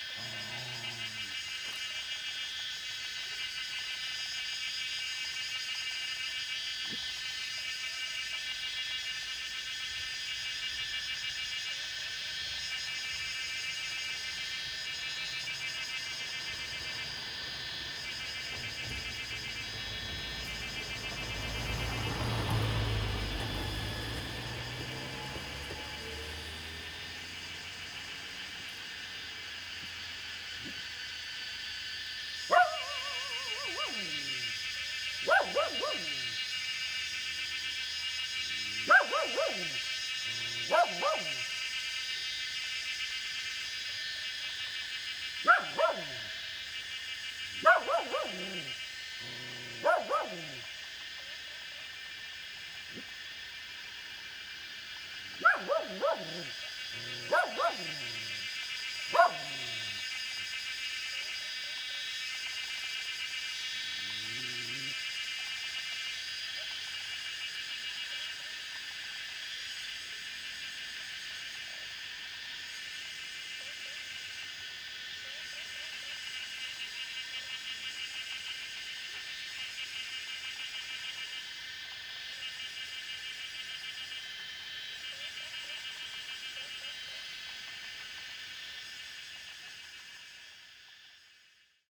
中路坑, 埔里鎮桃米里 - Cicada and Dogs barking
Cicada sounds, Dogs barking
Zoom H2n MS+XY
6 June 2016, 18:13